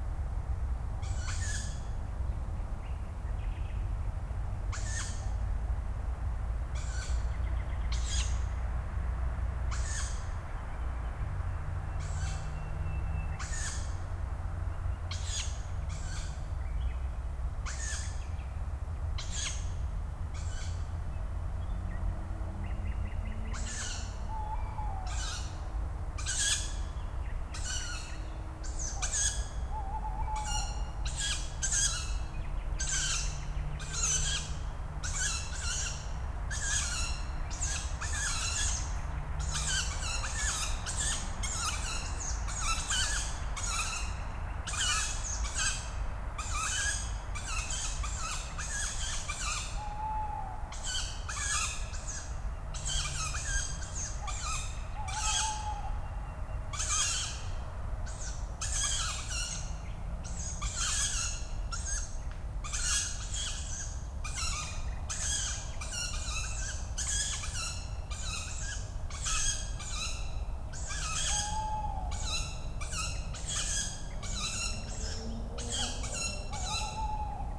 Schloßpark Berlin Buch, park ambience at night, young Tawny owls calling, a Nightingale in the background, and distant traffic noise. At 2:30 an adult is calling, and the kids are getting excited, jumping around in the trees. What to expect from city's nature?
(Sony PCM D50, DPA4060)
6 May 2019, 23:05